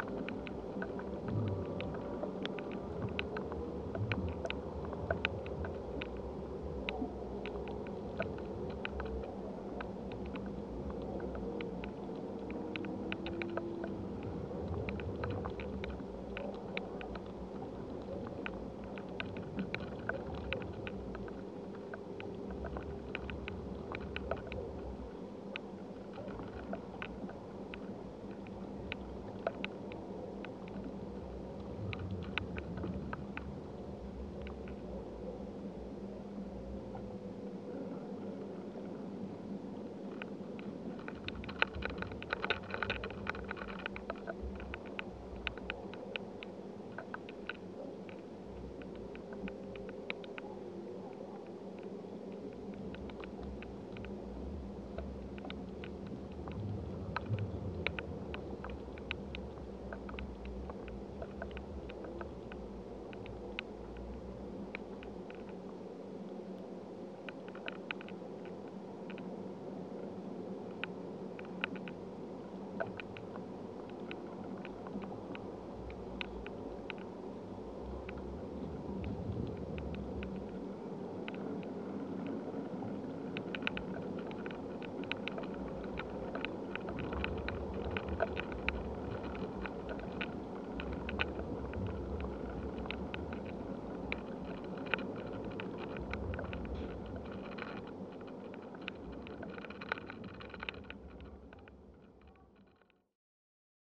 contact microphones in a dead tree trunk
Lithuania, 3 March 2018